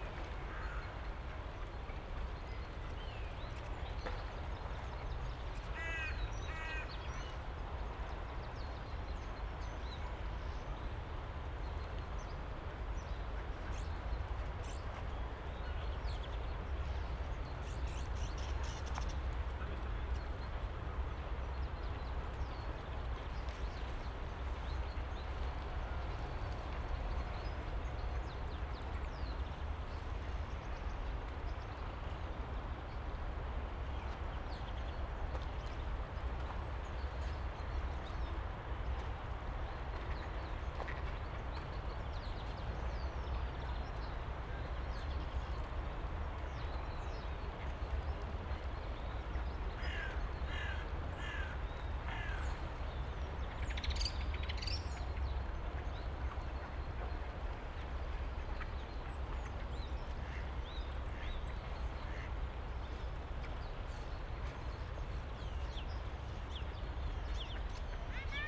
Schweizergarten, Wien, Österreich - Schweizer Garten
ambience of Schweizer Garten at the lake - constant traffic hum in the background, birds, dogs, pedestrians passing by - recorded with a zoom Q3